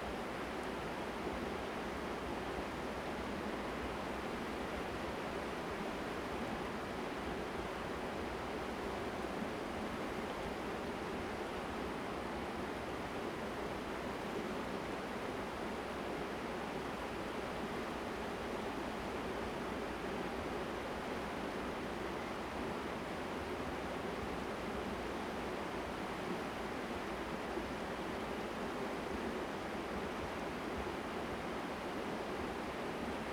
太麻里鄉金崙溪, Taitung County - stream sound
stream sound, On the river bank, Bird call
Zoom H2n MS+XY
Taimali Township, 金崙林道, 1 April, 16:38